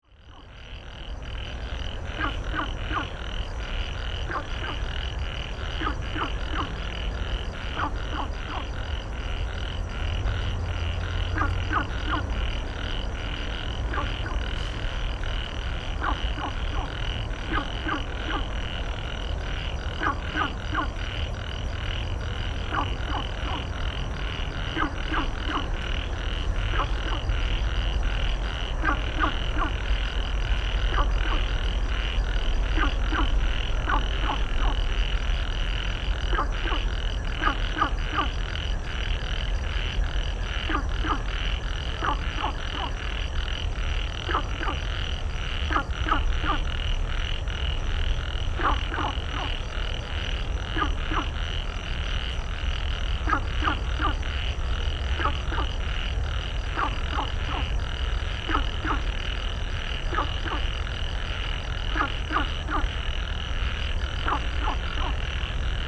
Dazhuwei, Tamsui Dist., New Taipei City - Frog chirping
Frog calls, Beside the river, traffic sound
Sony PCM D50